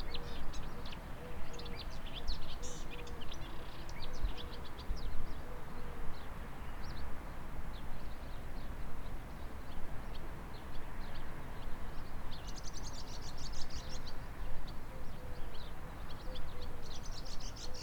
birds went crazy this morning, some of them Ive never heard before.
Poznan, Poland